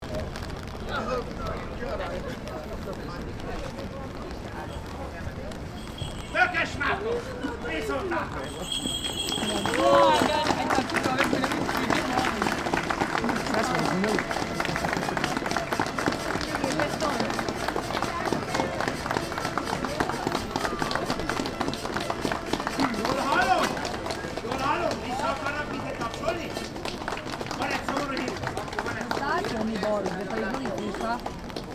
santa on corner
santas show ends...